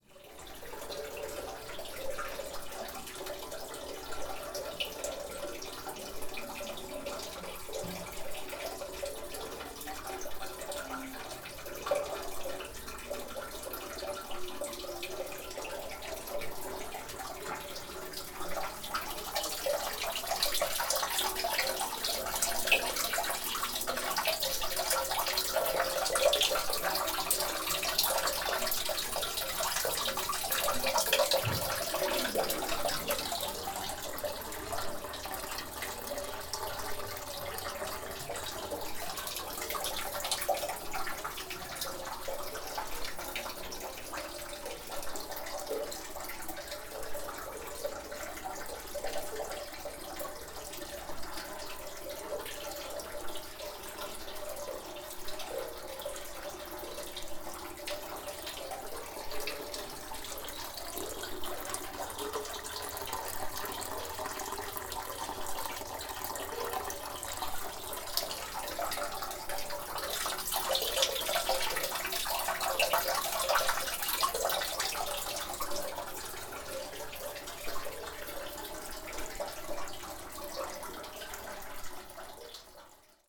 Capuchos Convent, inner fountain, room resonance, wtaer
28 August 2010, 14:40